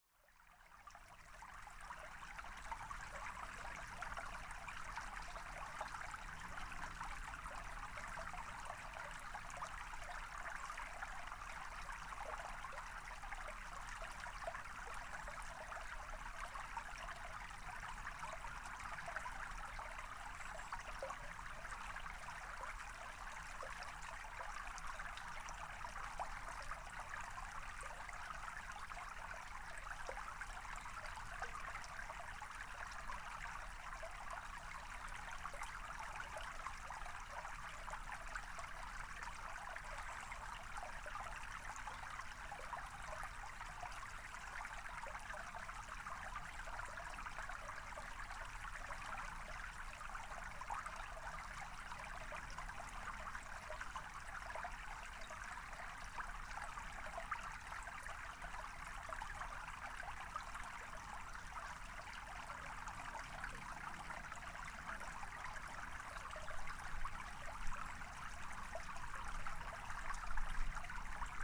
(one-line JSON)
{"title": "River Dudwell, UK - River Dudwell in Winter", "date": "2017-01-22 12:50:00", "description": "Recording of the River Dudwell on a cold January afternoon. With little rain recently the strength of the river was relatively light. Some parts of the river had iced up. Tascam DR-05 internal microphone, wind muff.", "latitude": "50.97", "longitude": "0.33", "altitude": "79", "timezone": "GMT+1"}